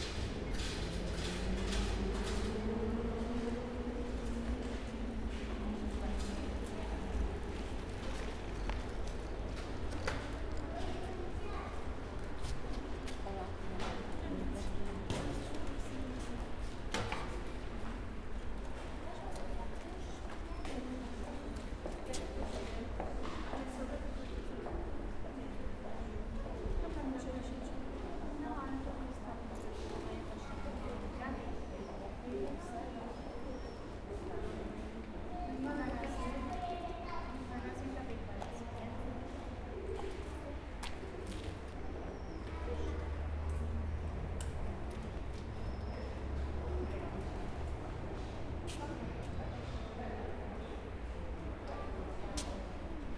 Poznań, express tram line, kurpińskiego stop - waiting for my ride
waiting for a tram, old and modern carriages arrive, squeals of young pigeons
Poznań, Poland, 18 July, ~10:00